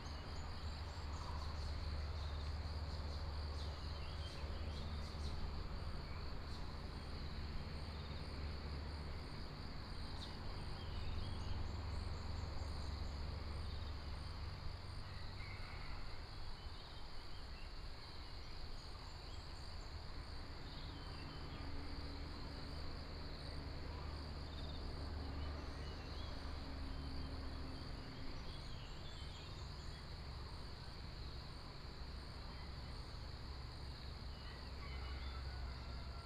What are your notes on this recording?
In the woods, Traffic Sound, Bird sounds, Crowing sounds